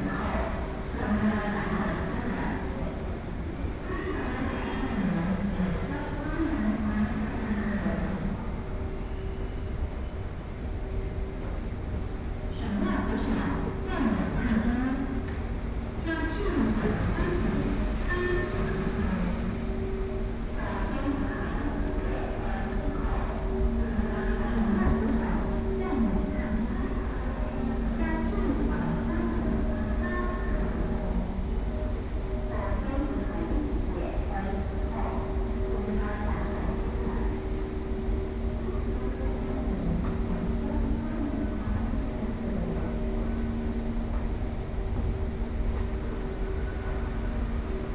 Songjiang Nanjing Station - Into MRT stations